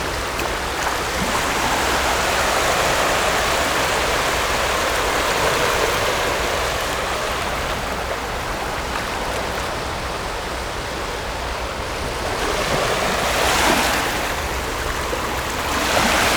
Jinsnan, New Taipei City - The sound of the waves